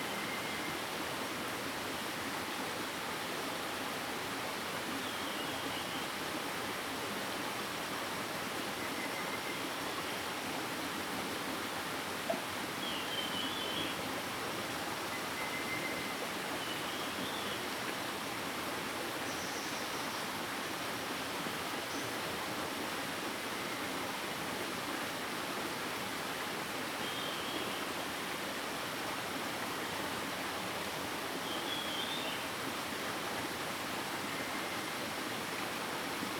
種瓜坑, 桃米里 Puli Township - Stream and Birds
Stream and Birds, In the woods
Zoom H2n MS+XY